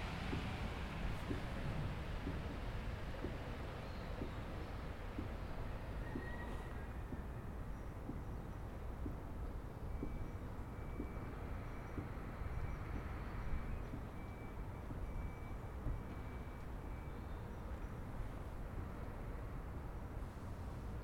Nieuwendammerkade, Amsterdam, Nederland - Wasted Sound Twellegea
Wasted Sound along the water
Noord-Holland, Nederland, 6 November 2019, 12:15